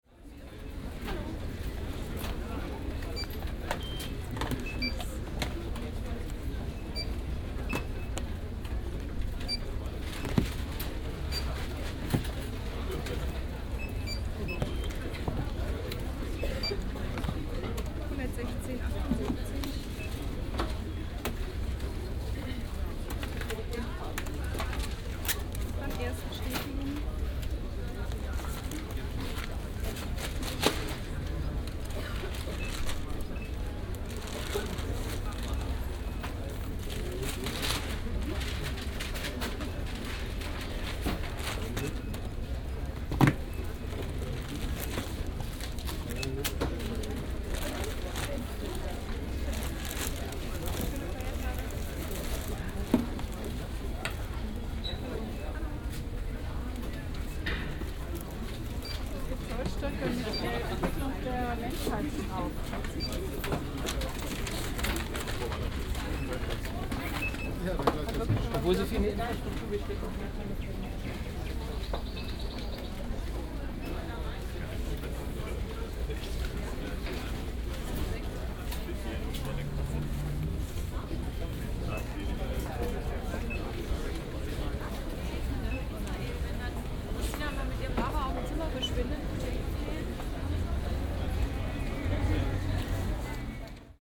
Berlin, Friedrichstr., bookstore - christmas ambience
22.12.2008 15:40, christmas again, which presents other than books? crowded bookstore as usual.
Berlin, Germany